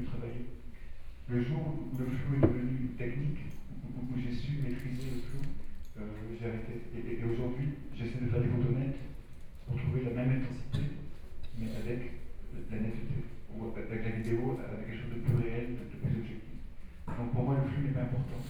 Ground floor of the museum's library, French photographer Antoine DAgata lectures, Museum curator to ask questions and share, Binaural recordings, Sony PCM D50 + Soundman OKM II
Taipei City, Taiwan, 2 November, 15:32